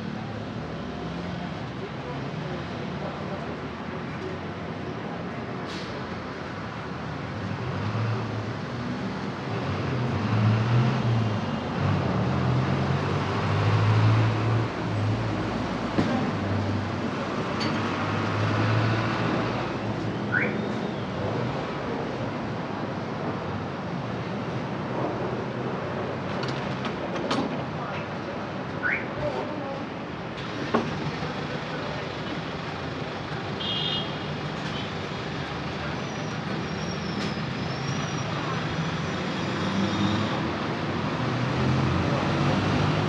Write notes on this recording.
Leonardelos pizza. Northwest of the capital. Double track corner. On 167th street. Traffic of cars and buses accelerating, a car alarm, horns, people's voices, fragments of people's conversations, truck engines, car whistles, and people unloading objects that appear to be restaurant material.